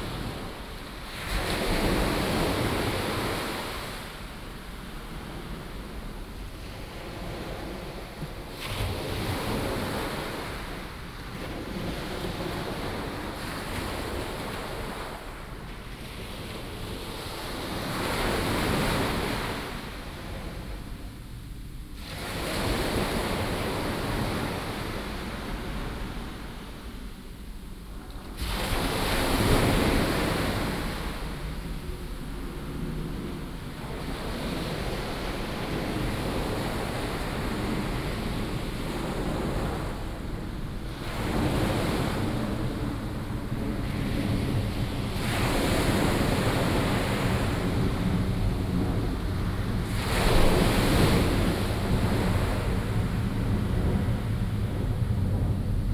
Qianshuiwan Bay, Sanzhi Dist., New Taipei City - Sound of the waves
Waterfront Park, Sound of the waves, Aircraft flying through
New Taipei City, Taiwan